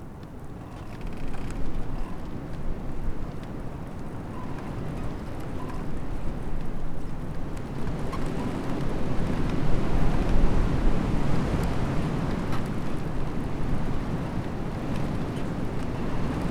lancken-granitz: holunderbaum - the city, the country & me: elder tree
same tree next day
the city, the country & me: march 8, 2013
Amt für das Biosphärenreservat Südost-Rügen, Germany, 8 March 2013, ~18:00